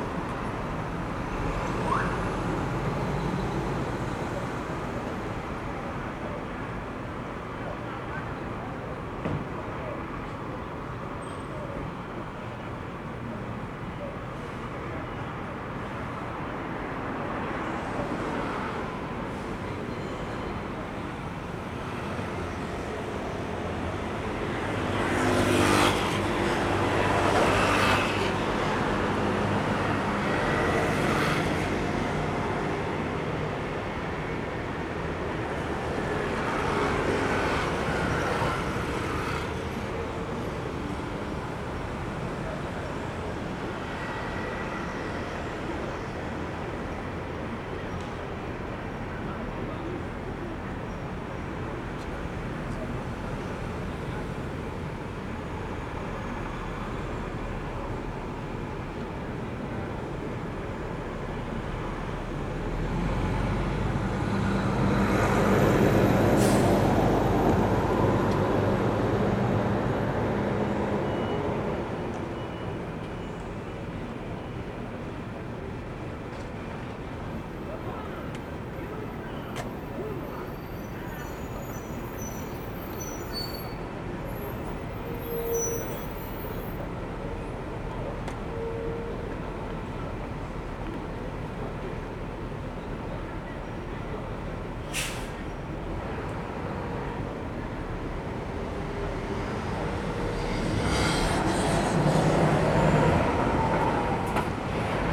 Sanmin District - The streets at night
The streets at night, Sony ECM-MS907, Sony Hi-MD MZ-RH1